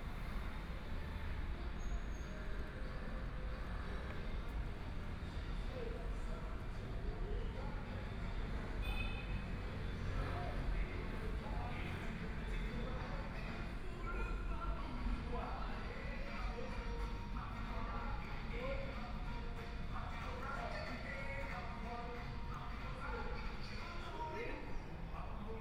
Xinbeitou Branch Line, Taipei - Walking beneath the track
Walking beneath the track, from MRT station, Traffic Sound, Motorcycle Sound, Trains traveling through, Clammy cloudy, Binaural recordings, Zoom H4n+ Soundman OKM II
Taipei City, Taiwan, 10 February 2014, ~21:00